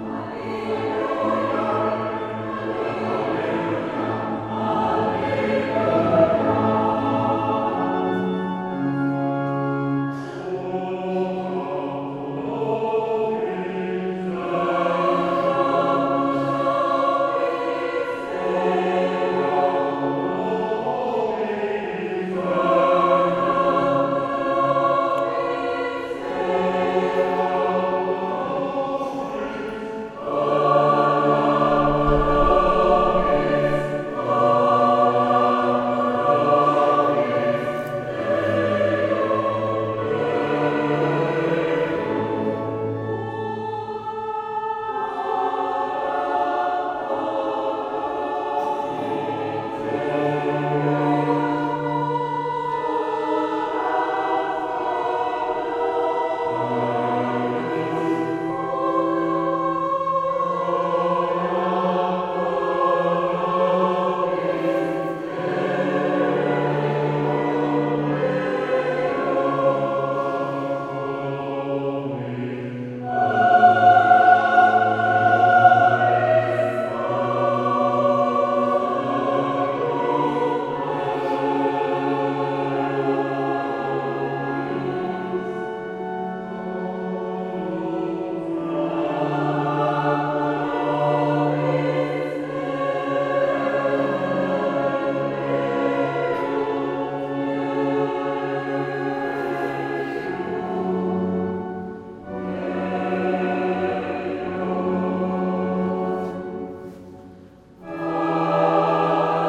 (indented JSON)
{
  "title": "clervaux, church, mass",
  "date": "2011-07-12 22:53:00",
  "description": "The church organ and choir at the Mother Gods Procession day.\nClervaux, Kirche, Messe\nDie Kirchenorgel und der Chor bei der Muttergottesprozession. Aufgenommen von Pierre Obertin im Mai 2011.\nClervaux, église, messe\nL’orgue de l’église et la chorale le jour de la procession de la Vierge. Enregistré par Pierre Obertin en mai 2011.\nProject - Klangraum Our - topographic field recordings, sound objects and social ambiences",
  "latitude": "50.06",
  "longitude": "6.03",
  "altitude": "358",
  "timezone": "Europe/Luxembourg"
}